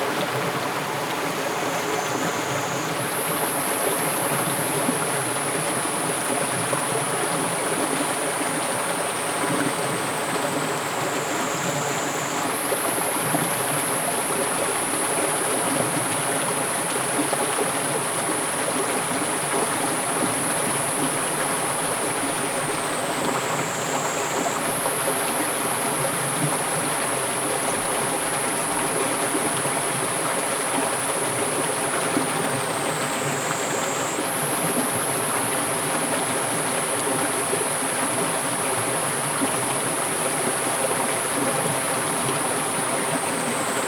種瓜坑溪.桃米里Puli Township - In streams
The sound of the river
Zoom H2n MS+XY +Spatial audio